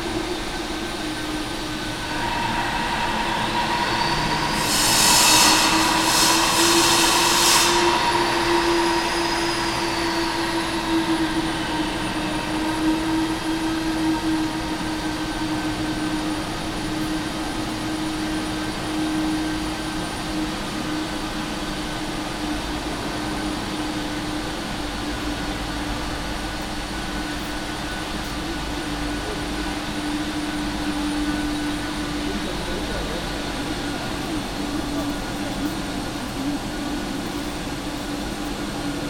cologne, dom cathedral, construction
construction noise inside the dom cathedrale in the morning time
soundmap nrw - social ambiences and topographic field recordings
Deutschland, European Union